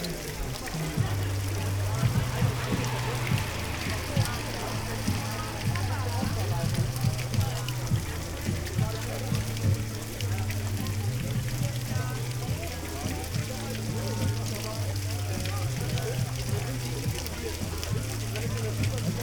Odonien, Hornstr., Köln - water sculpture, concert
Odonien, a self organized art space, Köln, metal sculpture fountain, one man concert in the background
(Sony PCM D50, Primo EM172)
20 August, 20:00